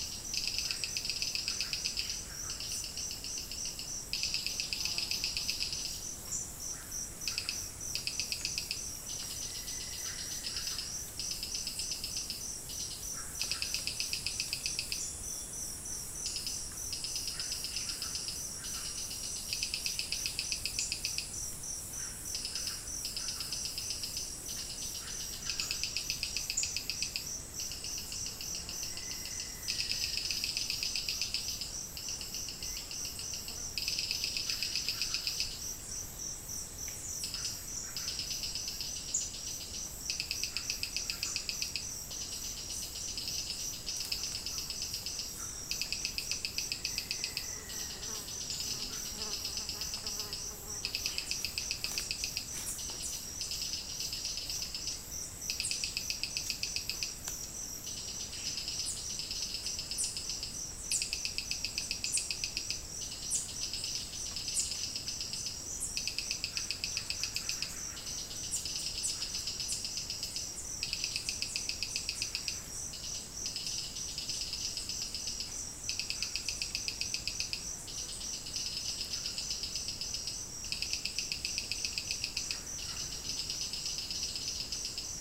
La Chorrera, Amazonas, Colombia - AMBIENTE SELVA
AMBIENTE SELVA CERCA A LA CHORRERA, GRABADORA TASCAM DA-P1 Y MICROFONO PV-88 SHURE. GRABACION REALIZA POR JOSÉ LUIS MANTILLA GÓMEZ.
18 September 2001